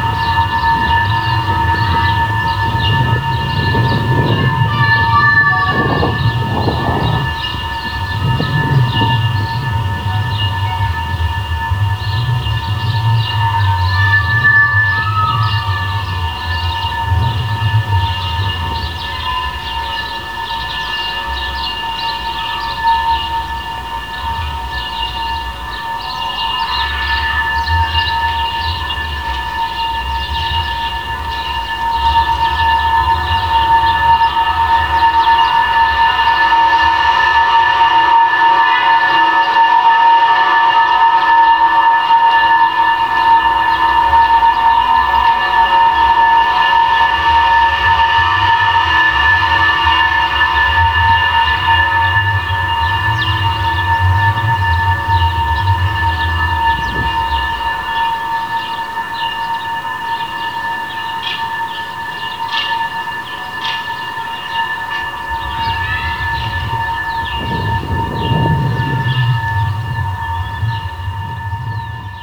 Villagonzalo Pedernales, Burgos, Spain - Bridge 001. Para puente, micrófonos de contacto, y viento
Contact microphones, a traffic bridge over a railway, and more wind you can shake a stick at. Listening to, and thinking about, the resonance of this other, inacessible space of sound induction.